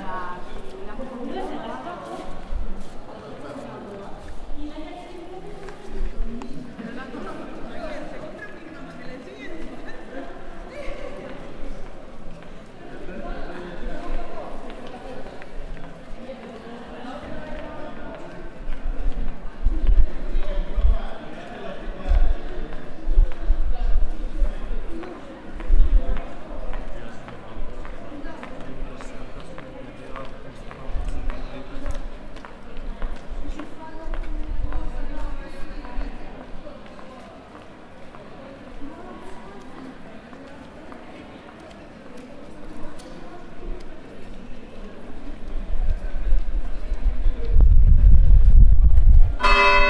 bilbao santiago cathedral
In front of the cathedral of bilbao. Cold and windy sunday.
Biscay, Spain